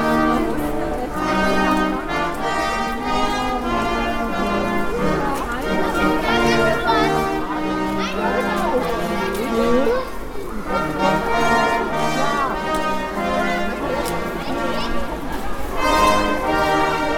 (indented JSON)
{
  "title": "Cologne, Blumenthalstr., Deutschland - St. Martins procession",
  "date": "2013-11-12 17:36:00",
  "description": "Children of a kindergarden and their parents carry paper lanterns and sing St. Martins songs. The brass music is performed by elderly pupils",
  "latitude": "50.96",
  "longitude": "6.96",
  "altitude": "57",
  "timezone": "Europe/Berlin"
}